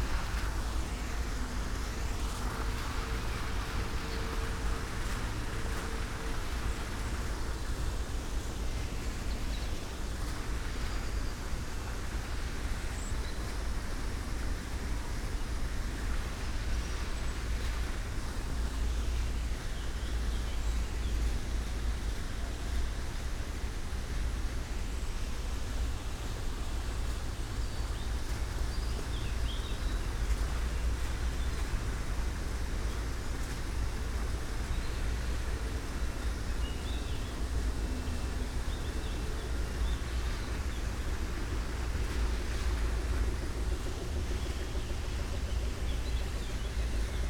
Parc Muncipal, Esch-sur-Alzette, Luxemburg - waterfall fountain
Parc Muncipal, Esch-sur-Alzette, artificial waterfall fountain
(Sony PCM D50, Primo172)
Canton Esch-sur-Alzette, Lëtzebuerg, 10 May 2022, 08:35